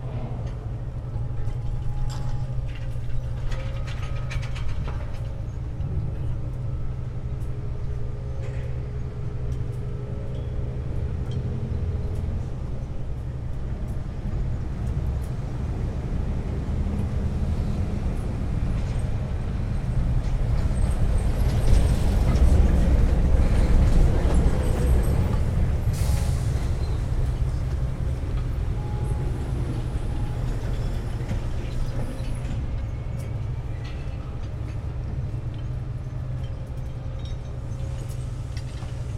Binckhorst, Laak, The Netherlands - Cranes and ship
Cranes uploading gravel to a ship, harbour ambience, various workshops and vehicles on the background.
4 track (AB+XY) recording (dpa4060 + AT8022 into Roland R-44).
Binckhorst Mapping Project.